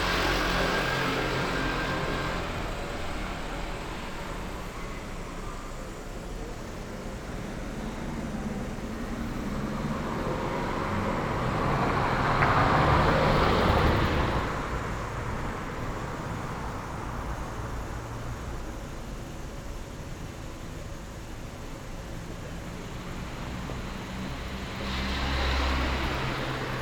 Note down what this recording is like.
Tuesday March 10 2020. Walking in the movida district of San Salvario, Turin the first night of closure by law at 6 p.m.of all the public places due to the epidemic of COVID19. Start at 7:31 p.m., end at h. 8:13 p.m. duration of recording 40'45'', The entire path is associated with a synchronized GPS track recorded in the (kml, gpx, kmz) files downloadable here: